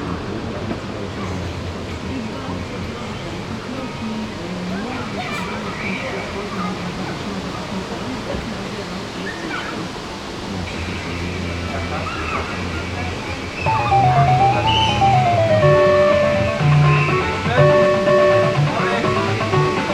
Wyspa Sobieszewska, Gdańsk, Poland - Grajace automaty
Grajace automaty rec. Rafał Kołacki